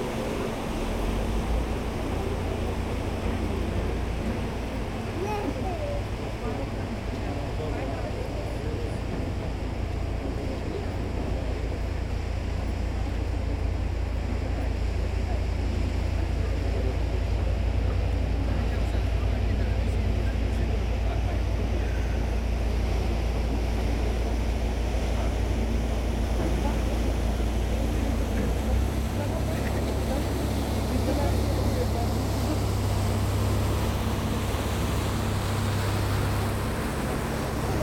Maastricht, Pays-Bas - Sint-Servaas bridge
A long quiet walk on the Sint-Servaas bridge. At the beginning, I'm walking along the hundred bikes. After, as three barges are passing on the Maas river, the bridge is elevating. Bikes must drive on a narrow metallic footbridge. Boats passes, two are producing big engine noise, it's the third time I spot the Puccini from Remich, Luxemburg. When the bridge descends back, the barrier produces a specific sound to Maastricht.